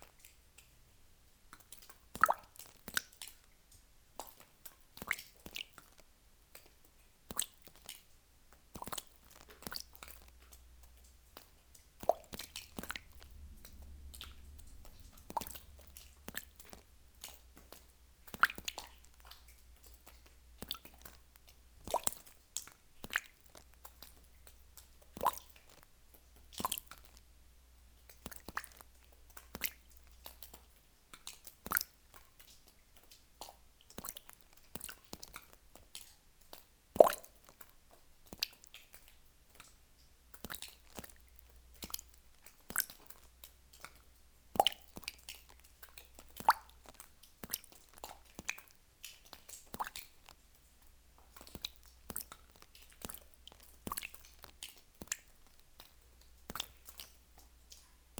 A simple sound of drops into an underground slate quarry, with a small sizzle sound when water reflux into calcite concretion.